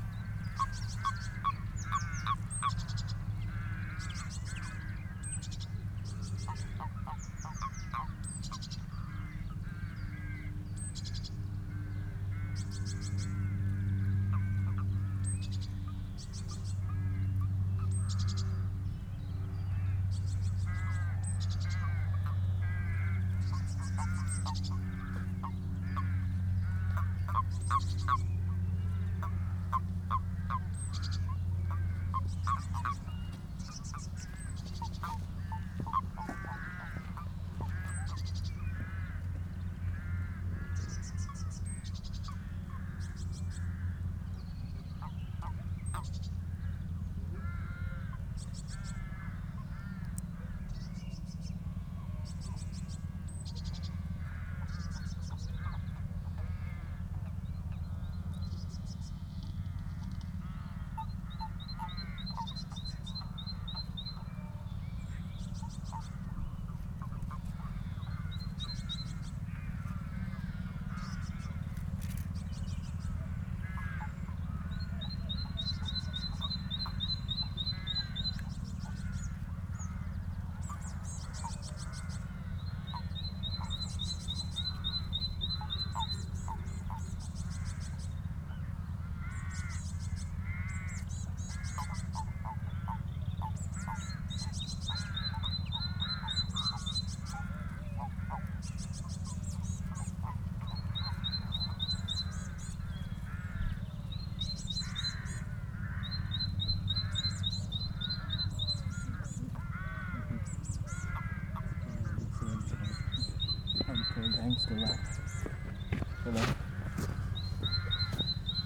Wilcot, Wiltshire, UK - Birds and the Bees
Recorded on the banks of the Kennet and Avon canal near Wilcot, as part of me walking from my house on the Kennet in Reading to Bristol over the course of a few months in 2011. There were loads of common frogs spawning at the edge of the canal, accompanied by a dozen different birds including, Woodpigeon, Chiff-chaff, Great tit, Blue Tit, Greenfinch, Collard Dove, Wren, Redshank?, Moorhen, Willow warbler and Robin. Recorded on an Edirol with custom capsule array.
Pewsey, Wiltshire, UK, 25 March